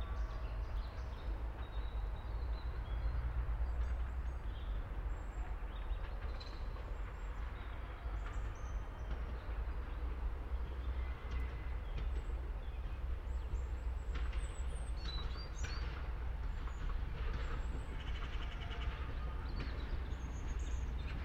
small train from Poznan, Skoki Poland
small diesel train from Poznan arriving to the platform in Skoki